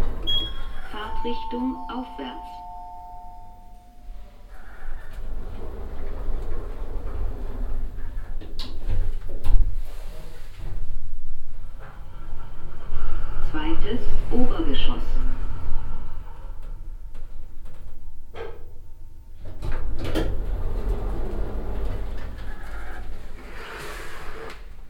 wolfsburg, hotel, elevator
A german speaking elevator in a hotel
Part 1 - arrival and drive upwards
soundmap d - social ambiences and topographic field recordings
Wolfsburg, Germany